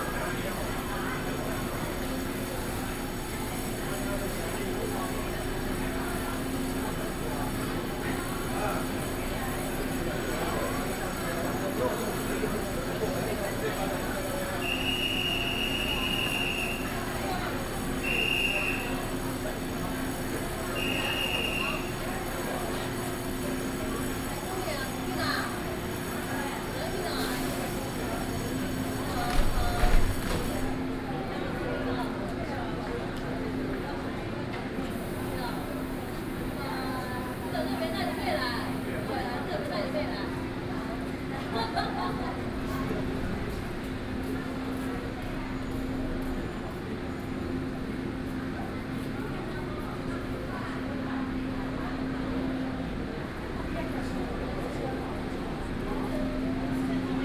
In the train station platform
Sony PCM D50+ Soundman OKM II
Ruifang District, New Taipei City, Taiwan, 5 June 2012